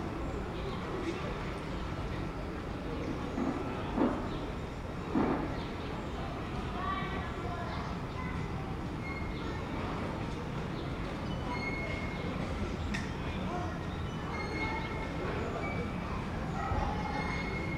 Theater, Dnipro, Ukraine - Theater - Outdoors [Dnipro]